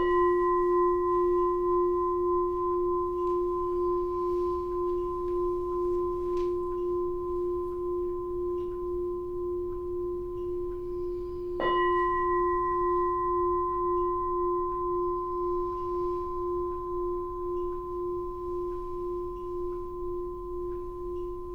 Unnamed Road, Dorchester, UK - Formal Tea Meditation Pt2
Part two of the formal tea meditation. After a short period of sitting meditation, Brother Phap Xa leads an incense offering, touching the earth (prostrations) and is joined in the refrains by participants. The participants bow to each other as a mark of gratitude repeating inwardly the line: A lotus to you, Buddha to be. Brother Phap Lich then prepares the tea and participants pass the cups around the group, bowing before receiving the tea. (Sennheiser 8020s either side of a Jecklin Disk on SD MixPre6)
October 2017